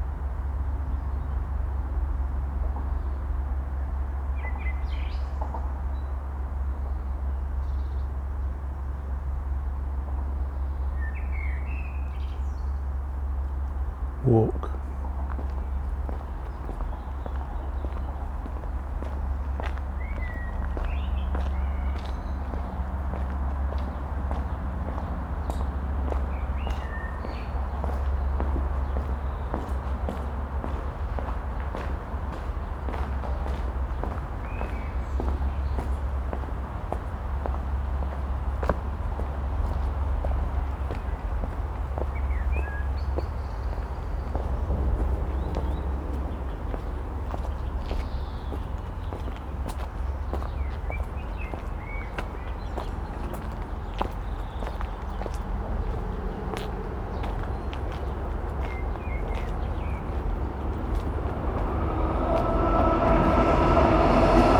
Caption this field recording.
Listening to the blackbird and to the mysterious throbbing bass in this spot, then walking under the bridge in cool shadow where footsteps reverberate. Trains pass by